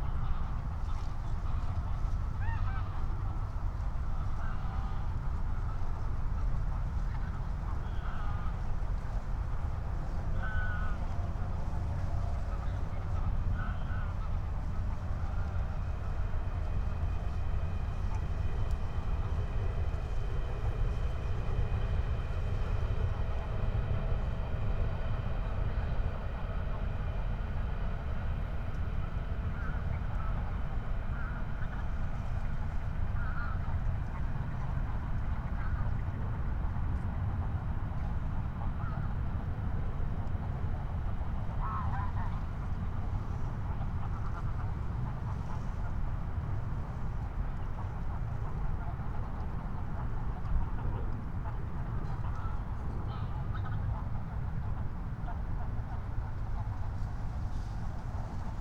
21:03 Berlin, Buch, Moorlinse - pond, wetland ambience
Deutschland, 3 October 2021